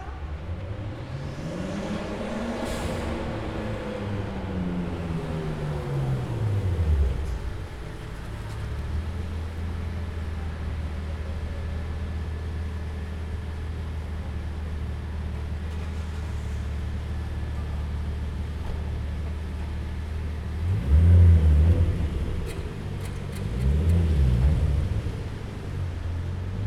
Wroclaw, Cinema Hostel, backstreet parking lot
taken form a window facing backyard of the building, parking lot and some construction
Wroclaw, Poland, 2 September 2010, ~09:00